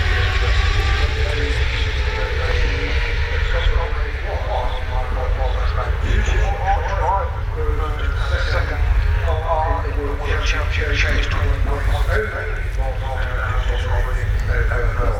Lillingstone Dayrell with Luffield Abbey, UK - british motorcycle grand prix 2013 ...
moto2 fp2 2013 ...